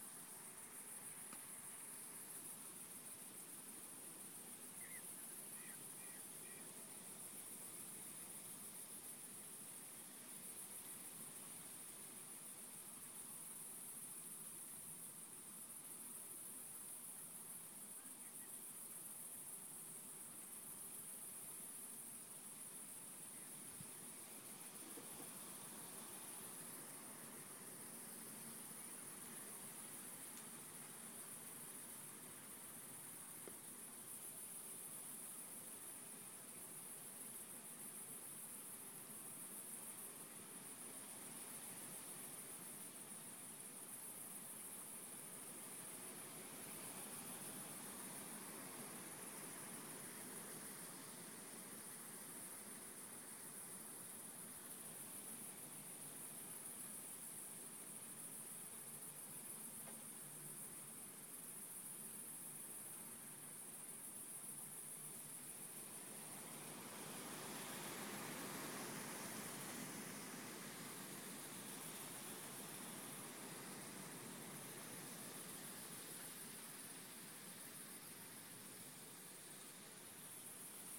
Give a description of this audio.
Recording of crickets and wind from a shelter in Haloze.